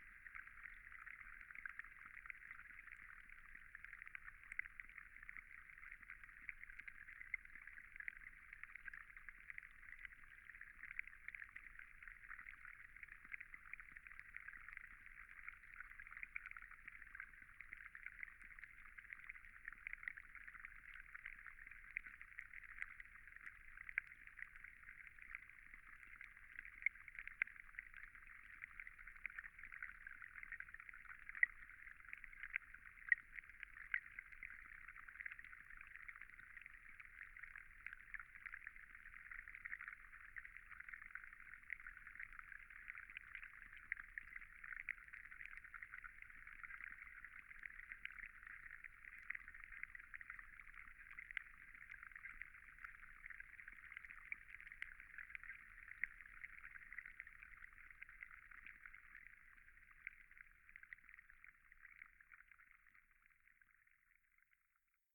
{
  "title": "Cape Farewell Hub The WaterShed, Sydling St Nicholas, Dorchester, UK - Sydling Trout Tank :: Below the Surface 4",
  "date": "2022-04-09 13:45:00",
  "description": "The WaterShed - an ecologically designed, experimental station for climate-focused residencies and Cape Farewell's HQ in Dorset.",
  "latitude": "50.79",
  "longitude": "-2.52",
  "altitude": "103",
  "timezone": "Europe/London"
}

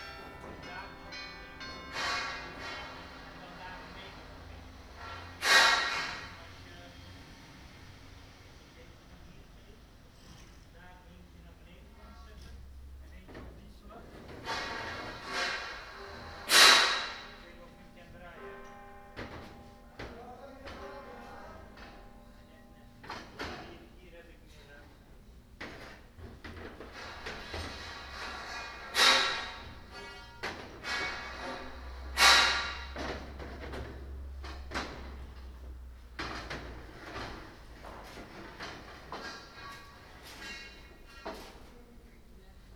{
  "title": "Kortenbos, Centrum, Nederland - Building a scaffold",
  "date": "2011-10-01 12:33:00",
  "description": "It took about 2 months to build this huge scaffold around the Theresia van Ávila church in Den Haag",
  "latitude": "52.08",
  "longitude": "4.31",
  "altitude": "8",
  "timezone": "Europe/Amsterdam"
}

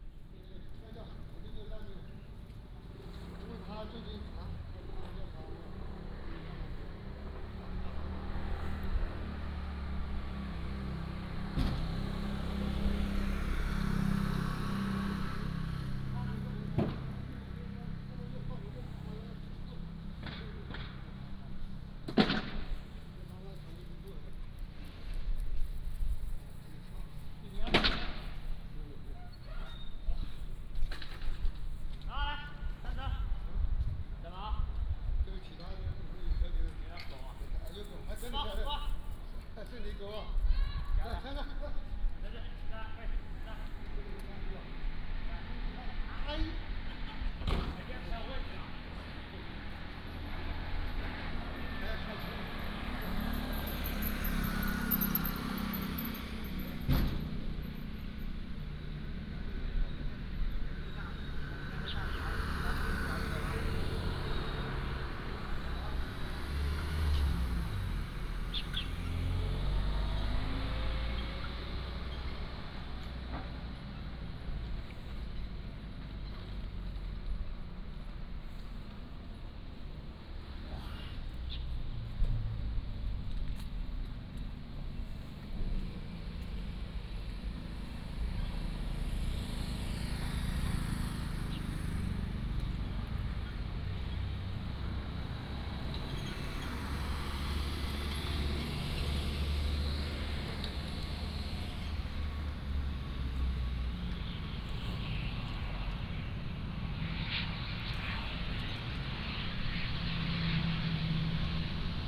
{
  "title": "塘岐村, Beigan Township - In the parking lot",
  "date": "2014-10-15 15:48:00",
  "description": "Birds singing, In the parking lot, outside the airport, The sound of aircraft landing",
  "latitude": "26.22",
  "longitude": "120.00",
  "altitude": "10",
  "timezone": "Asia/Taipei"
}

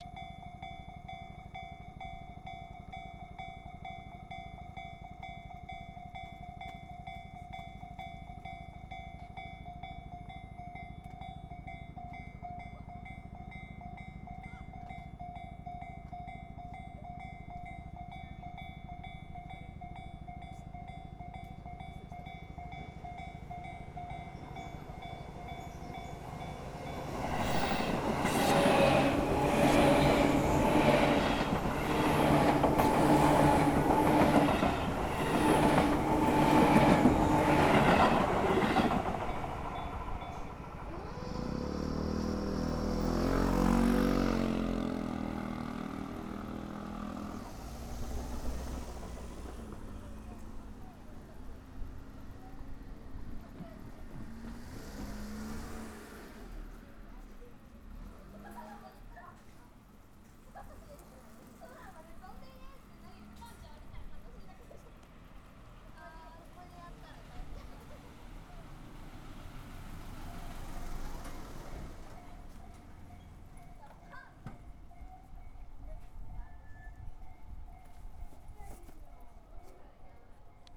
{
  "title": "Оямаканаитё, Итабаси, Токио, Япония - Railroad crossing",
  "date": "2016-07-25 20:44:00",
  "description": "Railroad crossing near the station Oyama",
  "latitude": "35.75",
  "longitude": "139.71",
  "altitude": "33",
  "timezone": "Asia/Tokyo"
}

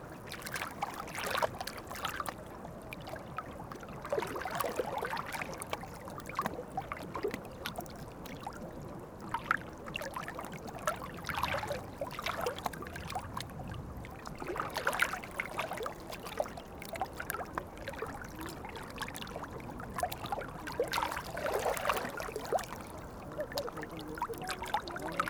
{
  "title": "Quartier des Bruyères, Ottignies-Louvain-la-Neuve, Belgique - Wavelets",
  "date": "2016-07-10 16:10:00",
  "description": "Wavelets on the Louvain-La-Neuve lake. Just near, people tan because it's a very hot day.",
  "latitude": "50.67",
  "longitude": "4.61",
  "altitude": "103",
  "timezone": "Europe/Brussels"
}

